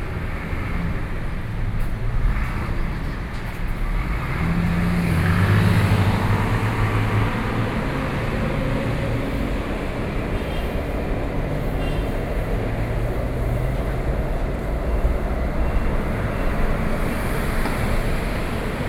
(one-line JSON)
{"title": "Taipei, Taiwan - Under the MRT track", "date": "2012-11-03 10:24:00", "latitude": "25.11", "longitude": "121.52", "altitude": "8", "timezone": "Asia/Taipei"}